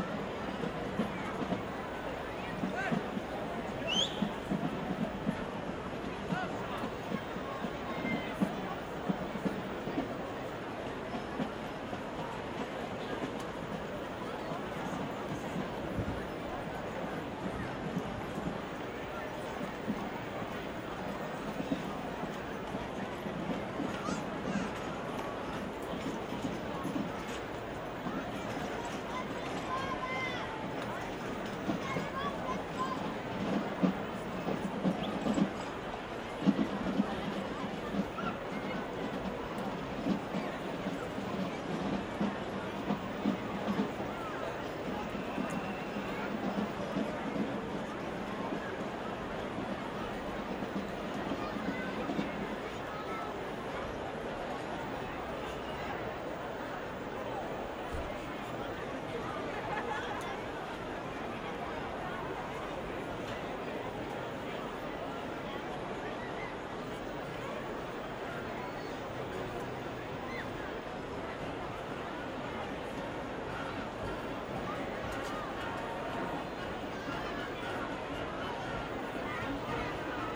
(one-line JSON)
{"title": "Zürich, Bellevue, Schweiz - Umzug", "date": "2005-04-18 17:29:00", "description": "Die Zünfte treffen bei dem Sechseläuteplatz ein. Volk, Blechmusik, Trommel\nSechseläuten ist ein Feuerbrauch und Frühlingsfest in Zürich, das jährlich Mitte oder Ende April stattfindet. Im Mittelpunkt des Feuerbrauchs steht der Böögg, ein mit Holzwolle und Knallkörpern gefüllter künstlicher Schneemann, der den Winter symbolisiert.", "latitude": "47.37", "longitude": "8.55", "altitude": "409", "timezone": "Europe/Zurich"}